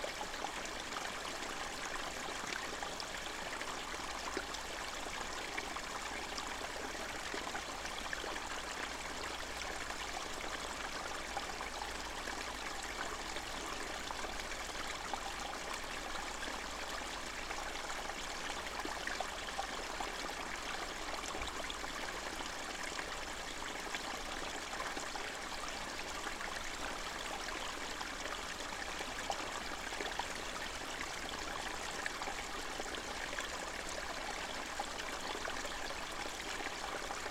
Narkūnai, Lithuania, winter sreamlet
streamlet at the sacred mound